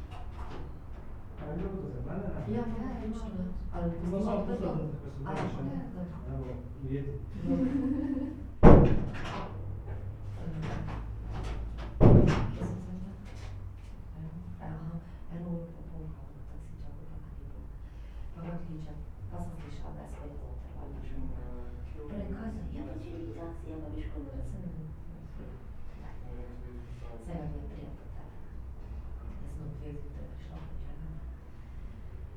Maribor, Kibla - a silent place under the roof
Maribor, Slovenia, 18 November 2011, ~14:00